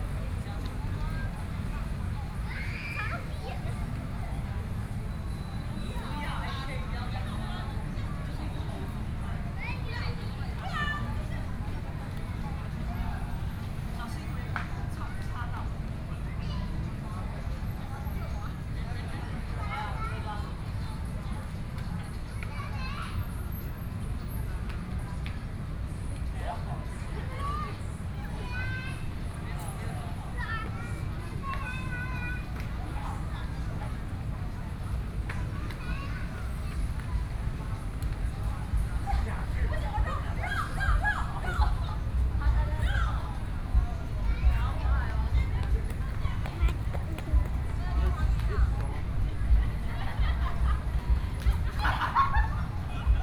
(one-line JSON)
{"title": "Zhongshan Park, 羅東鎮集祥里 - in the Park", "date": "2014-07-27 17:00:00", "description": "In the park, Children's play area, Traffic Sound", "latitude": "24.68", "longitude": "121.77", "altitude": "12", "timezone": "Asia/Taipei"}